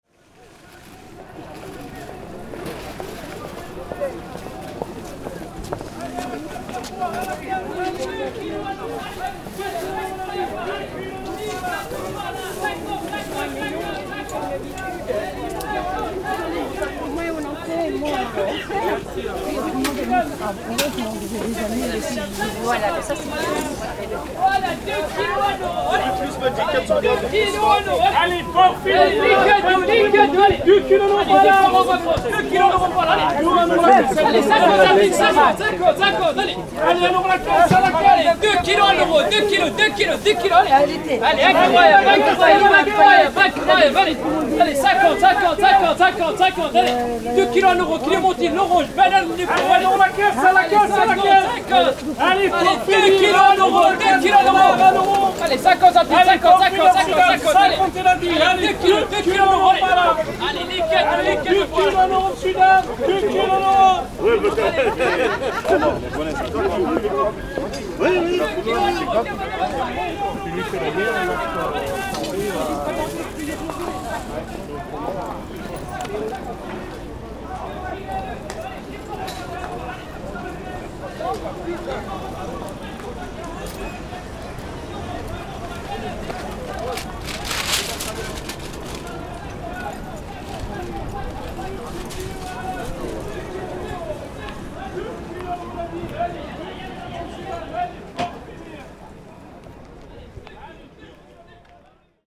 Ambiance au marché de l'Aigle, Zoom H6 et micros Neumann